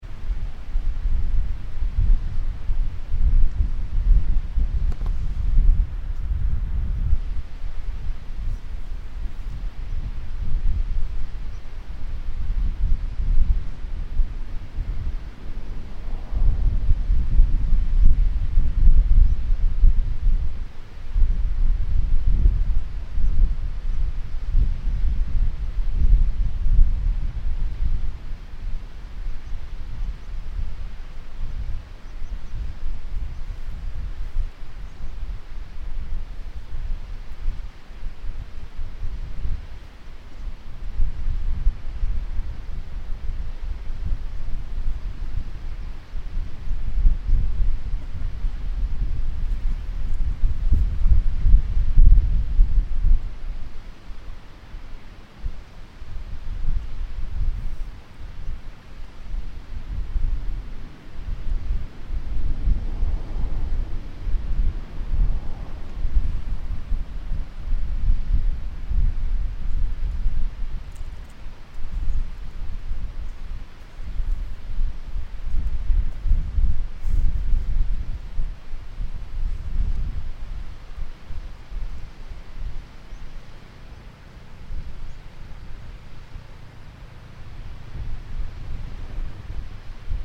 Transfagarasan, on the side of the road
Windy soundscape, on the side of the Transfagarasan road, second highest highway in Romania